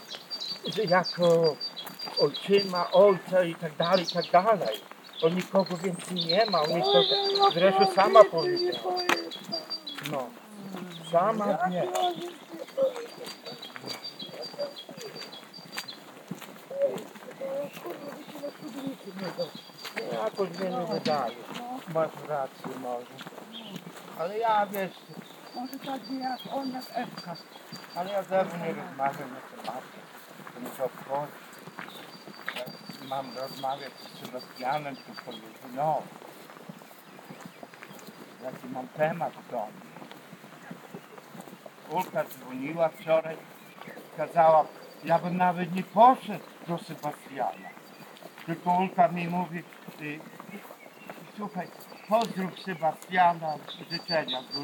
Hill park, Przemyśl, Poland - (110 BI) Eavsedropping Easter story
Binaural recording of two persons talking about Easter family encounters. The story doesn't contain any details about its actors, making it pretty absorbing, while being unharmful to its participants.
Recorded with Soundman OKM on Sony PCM D100